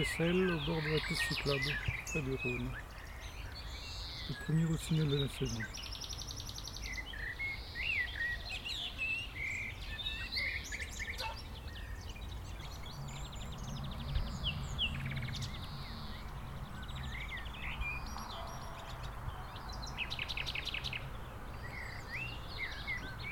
Piste cyclable, Seyssel, France - Premier rossignol
A la sortie de Seyssel sur la piste cyclable près du Rhône arrêt pour écouter mon premier rossignol de l'année.